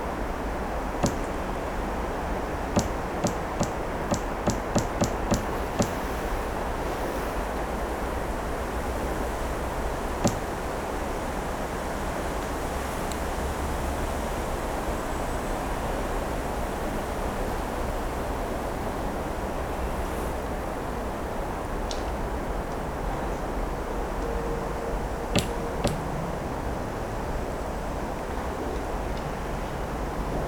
Morasko nature reserve - against the tree
recorder touching the trunk of a tree so the vibrations of the tree get picked up as well. the tree is pressing against another tree and you can hear the rubbing of branches and the patting of the trunk (roland r-07)